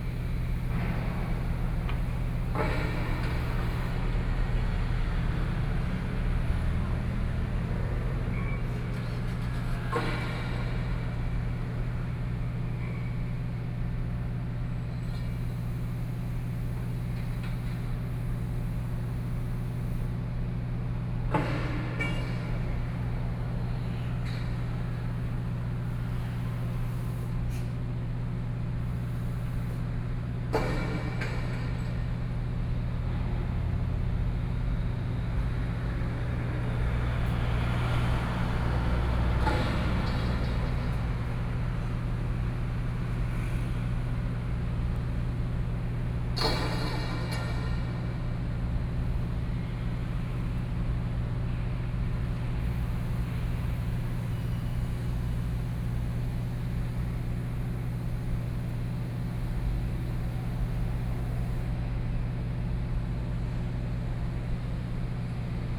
內埤路, Su'ao Township - walking on the Road
walking on the Road, Traffic Sound